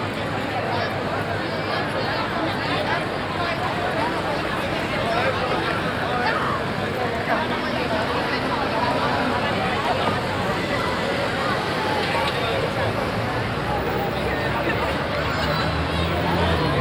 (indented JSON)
{
  "title": "Sevilla, Provinz Sevilla, Spanien - Sevilla - Calle Campana - youth street life",
  "date": "2016-10-08 22:00:00",
  "description": "In the evening in the city cenre. The sound of young people crowds in the streets walking and talking.\ninternational city sounds - topographic field recordings and social ambiences",
  "latitude": "37.39",
  "longitude": "-5.99",
  "altitude": "18",
  "timezone": "Europe/Madrid"
}